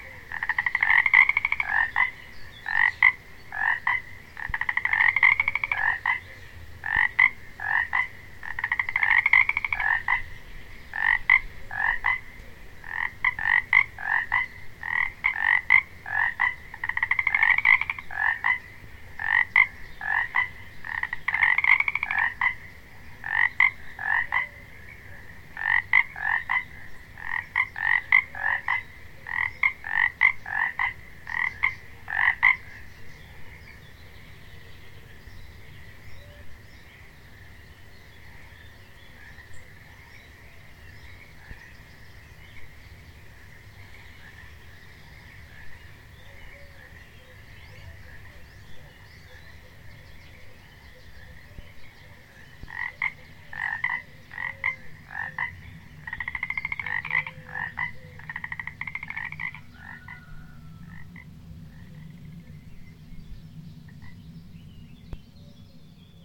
libocky rybnik
very early spring morning at the liboc pond, frogs. 2009
Prague, Czech Republic